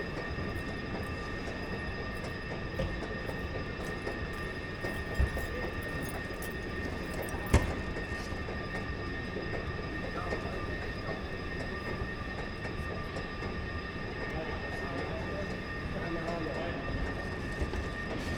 Berlin Südkreuz, Berlin, Deutschland - escalator, station ambience
station ambience and done from two escalators at Berlin Südkreuz
(Sony PCM D50, Primo EM172)
Berlin, Germany, 20 April